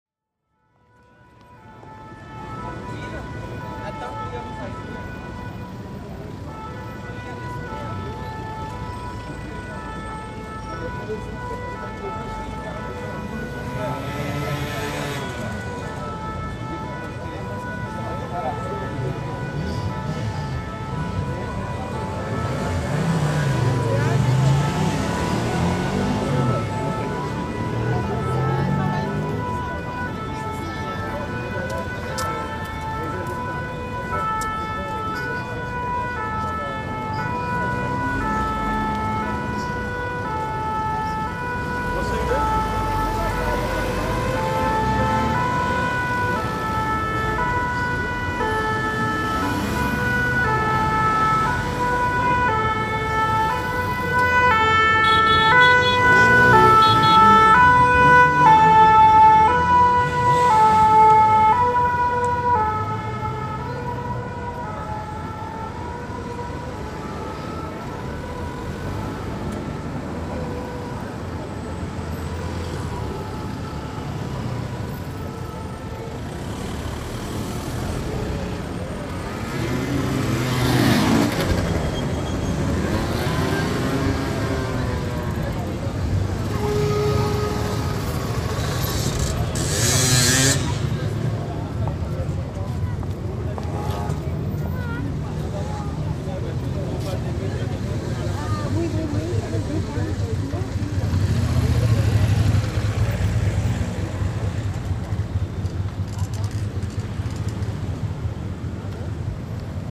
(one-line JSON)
{"title": "Quai des Grands Augustins, Paris - Firemen stuck in traffic", "date": "2010-09-11 17:30:00", "description": "Firemen stuck in traffic, Pont St. Michel, Paris. After de car finaly passes you can hear a child singing the sirene melody.", "latitude": "48.85", "longitude": "2.34", "altitude": "38", "timezone": "Europe/Paris"}